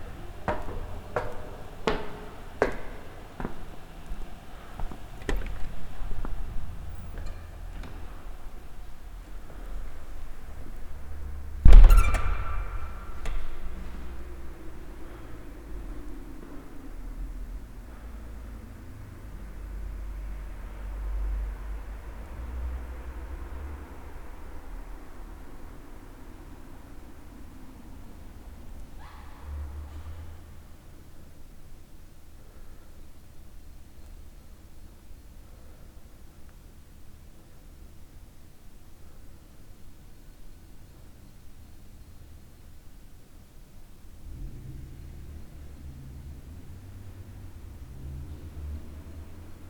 aachen, former steel factory
inside the facory hall - stepping up an old steel, narrow steel stairway and openig a queeking door
soundmap nrw - social ambiences and topographic field recordings